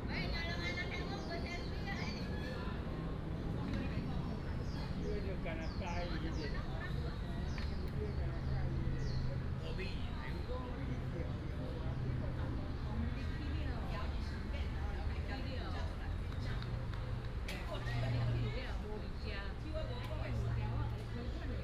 西雅里, Hsinchu City - A lot of old people in the park
old people playing chess, A lot of old people in the park, fighter, traffic sound, birds sound, Binaural recordings, Sony PCM D100+ Soundman OKM II
6 October 2017, ~16:00